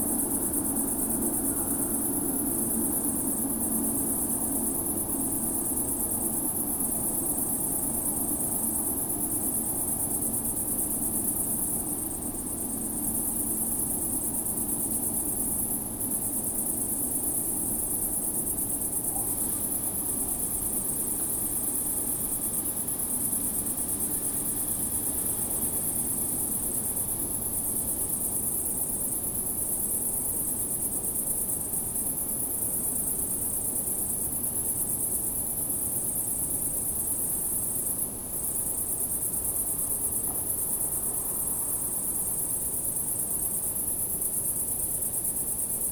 brandenburg/havel, kirchmöser, nordring: garden - the city, the country & me: garden by night
crickets, overhead crane of a track construction company and freight trains in the distance
the city, the country & me: august 23, 2016
Brandenburg an der Havel, Germany